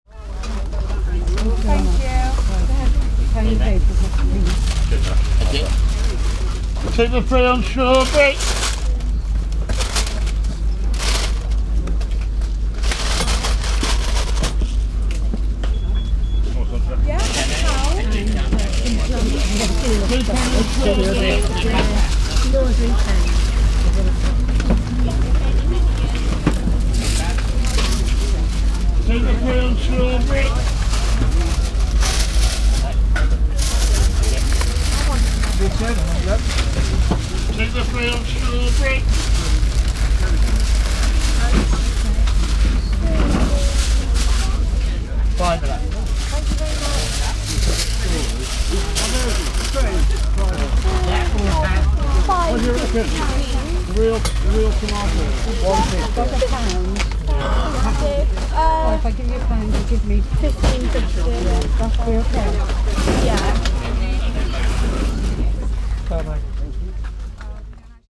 Outdoor Market Voices - Tewkesbury, Gloucestershire, UK
A short glimpse into the market atmosphere of traders and customers. Recorded with a Mix Pre 3 and 2 Beyer lavaliers.
England, United Kingdom, 11 August 2019